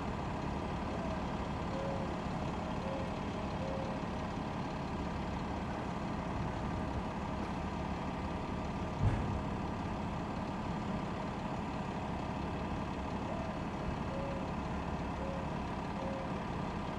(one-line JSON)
{"title": "Coquina Beach, Bradenton Beach, Florida, USA - Coquina Beach Bus Stop", "date": "2021-03-26 09:30:00", "description": "Idling bus at bus stop.", "latitude": "27.45", "longitude": "-82.69", "altitude": "7", "timezone": "America/New_York"}